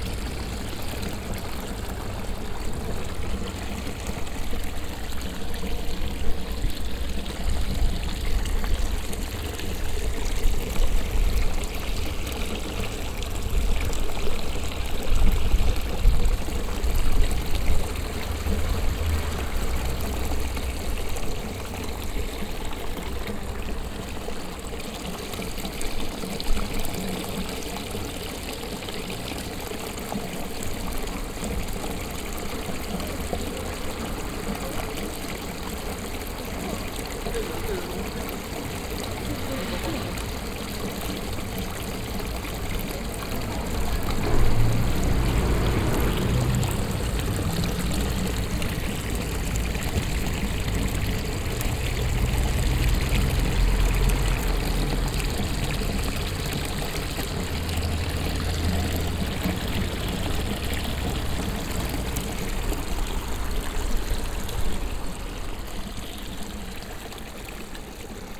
{"title": "diekirch, antoniusstroos, fountain", "date": "2011-08-08 20:43:00", "description": "Another fountain with a group of donkey figures. Here some parts of the metal figures like legs or ears can be moved.\ninternational village scapes - topographic field recordings and social ambiences", "latitude": "49.87", "longitude": "6.16", "altitude": "201", "timezone": "Europe/Luxembourg"}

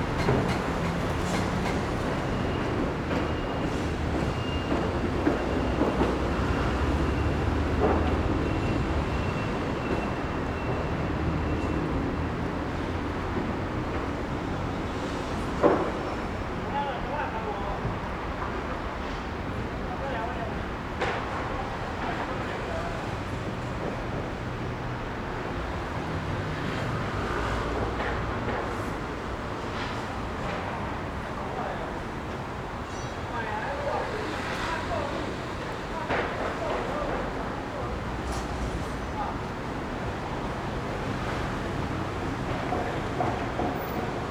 {"title": "Ln., Sec., Zhongxiao E. Rd., Da’an Dist. - the construction site", "date": "2015-01-13 11:09:00", "description": "Traffic Sound, Next to the construction site, Sound construction site\nZoom H4n + Rode NT4", "latitude": "25.04", "longitude": "121.54", "altitude": "14", "timezone": "Asia/Taipei"}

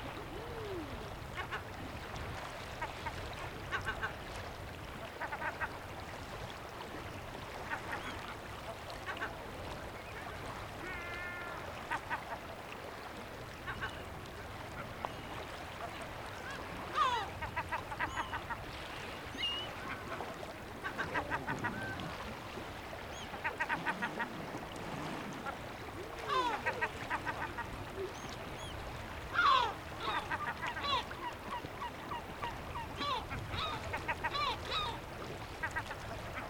{"title": "Small island of Allirahu, Estonia", "description": "Seabirds on island, waves", "latitude": "58.16", "longitude": "22.79", "altitude": "2", "timezone": "Europe/Tallinn"}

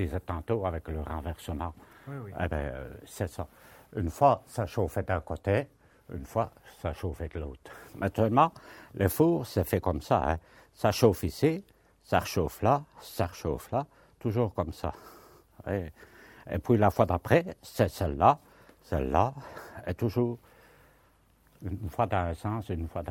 {"title": "Anderlues, Belgique - The coke plant - Valère Mennechez", "date": "2009-03-07 12:00:00", "description": "Valère Mennechez\nAn old worker testimony on the old furnaces of the Anderlues coke plant. We asked the workers to come back to this devastated factory, and they gave us their remembrances about the hard work in this place.\nRecorded with Patrice Nizet, Geoffrey Ferroni, Nicau Elias, Carlo Di Calogero, Gilles Durvaux, Cedric De Keyser.", "latitude": "50.42", "longitude": "4.27", "altitude": "166", "timezone": "Europe/Brussels"}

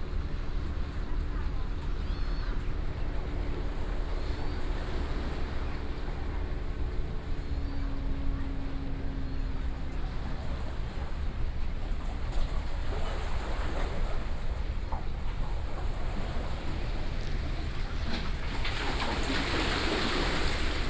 {"title": "野柳地質公園, Wanli District - Next to the dike", "date": "2012-06-25 17:22:00", "description": "Next to the dike, Sound of the waves, Consumers slope block\nSony PCM D50+ Soundman OKM II", "latitude": "25.21", "longitude": "121.69", "timezone": "Asia/Taipei"}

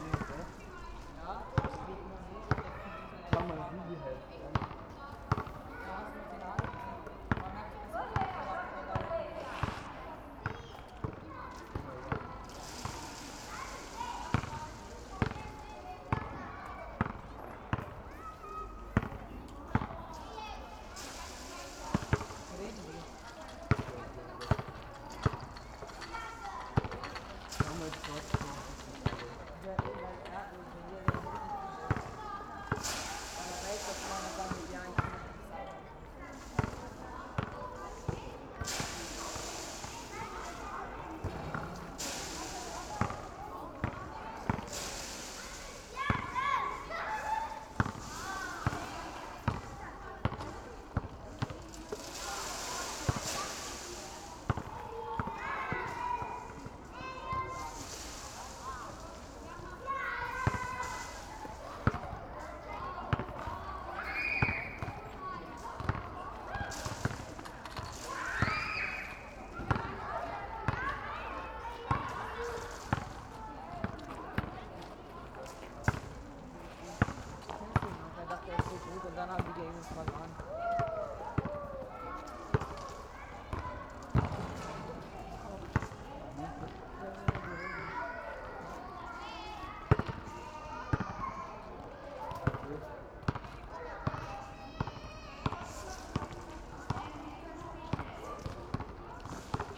{"title": "Bellevue Park, Bindermichl Tunnel, Linz - playground and basketball field", "date": "2020-09-08 17:30:00", "description": "playground ambience late afternoon\n(Sony PCM D50)", "latitude": "48.27", "longitude": "14.30", "altitude": "279", "timezone": "Europe/Vienna"}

